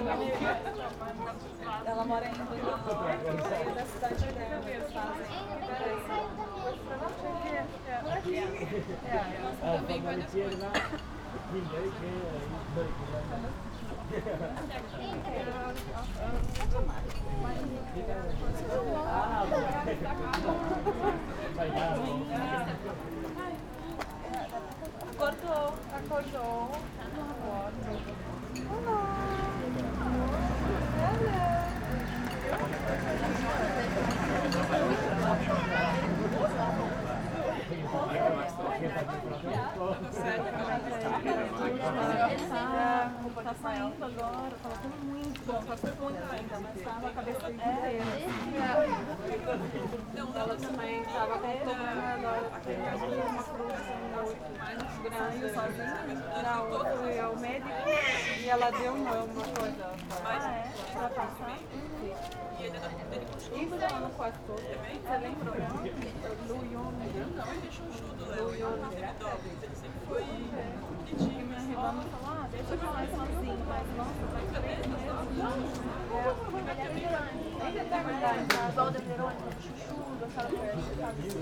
Herrfurthstr., Schillerkiez, Berlin - in front of a food store
Berlin, Herrfurthstr., in front of a food store, warm spring day, many people stop here for a sandwich and a drink, many are passing-by from or to Tempelhof park.
(Sony PCM D50)
Berlin, Germany, 22 May